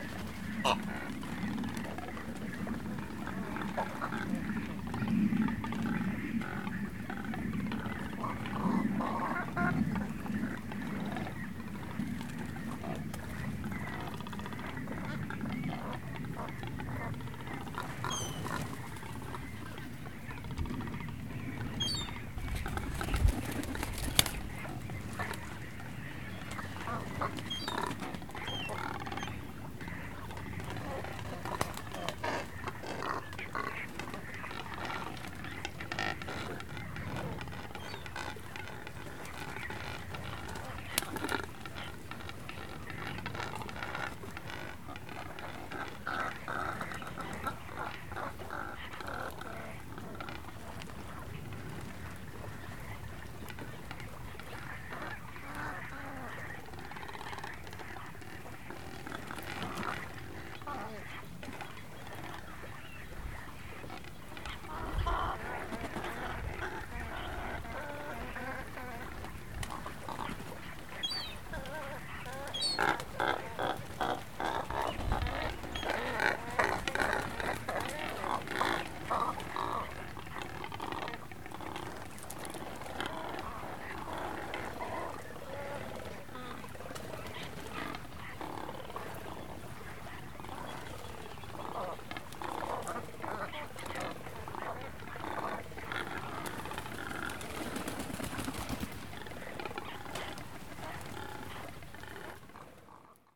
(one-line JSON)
{"title": "Hog Island - Hog Island Cormorants and other Ambience", "date": "2019-06-01 17:00:00", "description": "This was recorded shortly before dusk (I don't recall the exact time of day) on the north shore of Hog Island. Countless numbers of cormorants (along with seagulls and some other small ocean birds) were perched in the leafless trees on the island. I'm recording from below the islands rock, on the sandy shore, mic facing up at the birds. There was a massive amount of bird droppings and you could hear/see some of them splatting against the rock (though i'm not sure I could pick them out in this recording). This was recorded on my Zoom H4n. Note: This is the first field recording I've edited and shared, so I hope it is up to snuff.", "latitude": "38.20", "longitude": "-122.94", "altitude": "1", "timezone": "America/Los_Angeles"}